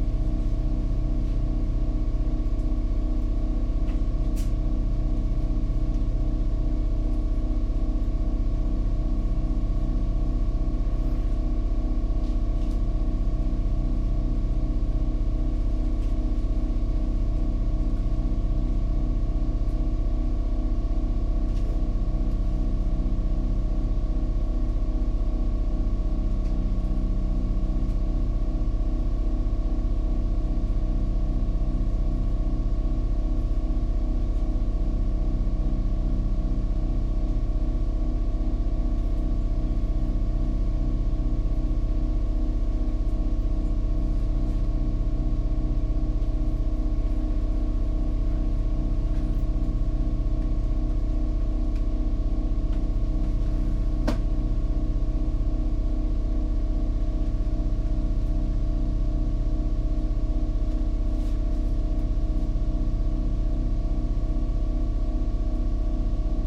Train Waiting to leave Katowice Poland
21 February, 20:39